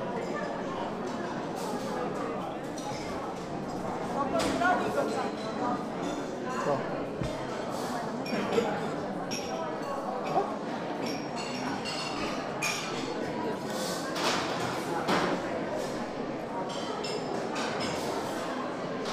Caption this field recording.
dinner is served in the dining hall at the sanatorium "Gryf", the din of the pottery, dishes, people echoe beautifully on the stone floor. "h2"recorder.